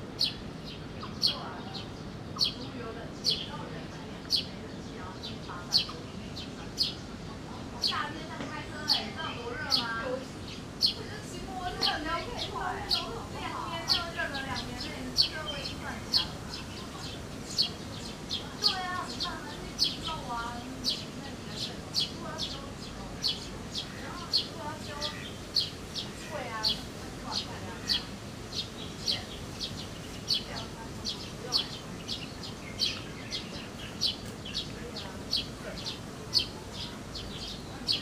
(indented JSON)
{
  "title": "No., Lane, Minxiang Street, East District, Hsinchu City, Taiwan - Lunchtime at Jin Shin Lake",
  "date": "2019-07-26 12:48:00",
  "description": "From within a covered park-bench area overlooking the lake, ducks, other birds and people pass the time, as the lunch hour concludes. Stereo mics (Audiotalaia-Primo ECM 172), recorded via Olympus LS-10.",
  "latitude": "24.78",
  "longitude": "121.01",
  "altitude": "82",
  "timezone": "Asia/Taipei"
}